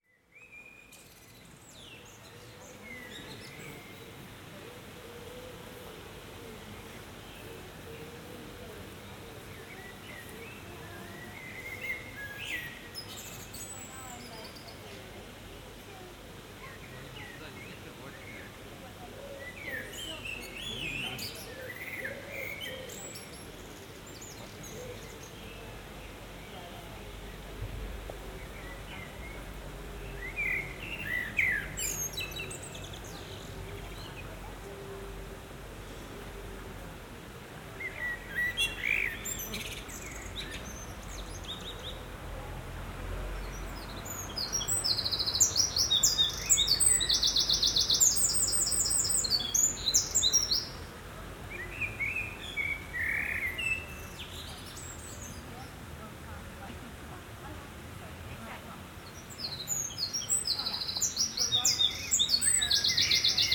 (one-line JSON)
{"title": "Kruidtuin van Leuven, Kapucijnenvoer, Leuven, Belgique - Blackbirds", "date": "2022-06-06 18:24:00", "description": "Tech Note : Ambeo Smart Headset binaural → iPhone, listen with headphones.", "latitude": "50.88", "longitude": "4.69", "altitude": "28", "timezone": "Europe/Brussels"}